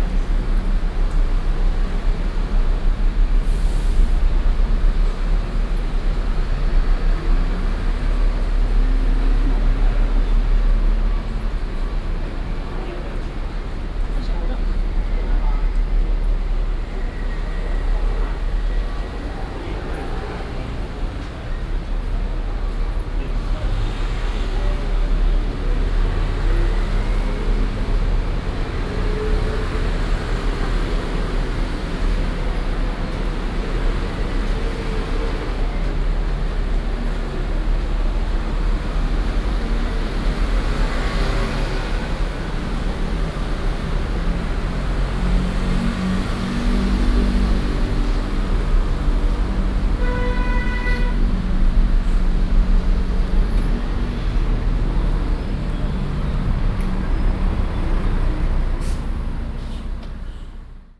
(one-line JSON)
{"title": "仁愛區, Keelung City - Walking on the road", "date": "2016-07-16 18:16:00", "description": "from the station, Walking on the road, Traffic Sound, Footsteps", "latitude": "25.13", "longitude": "121.74", "altitude": "10", "timezone": "Asia/Taipei"}